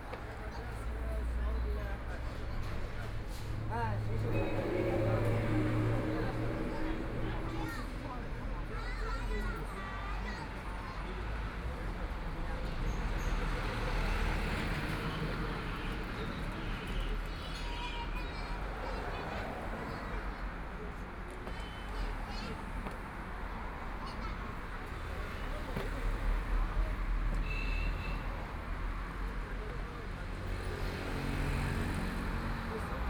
Fuxing Road, Shanghai - walking in the Street
Walking on the street, Community-mall, Binaural recordings, Zoom H6+ Soundman OKM II
Shanghai, China, 1 December 2013, 12:29